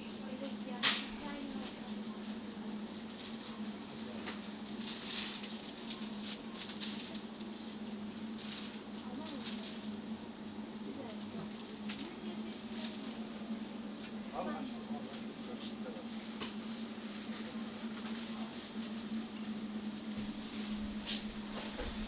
berlin, maybachufer: supermarkt - the city, the country & me: last day in the life of a supermarket
shopping in a supermarket (soundwalk)
the city, the country & me: january 7, 2012
Berlin, Germany, 7 January 2012